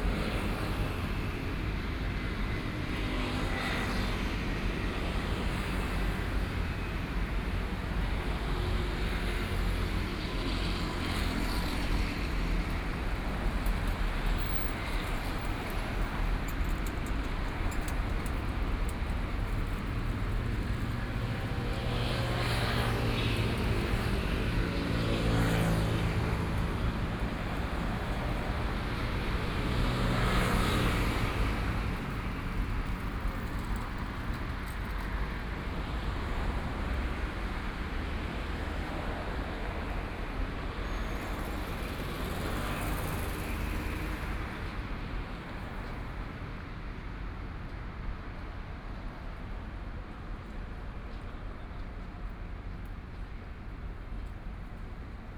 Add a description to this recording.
Traffic Sound, Binaural recordings, Zoom H6+ Soundman OKM II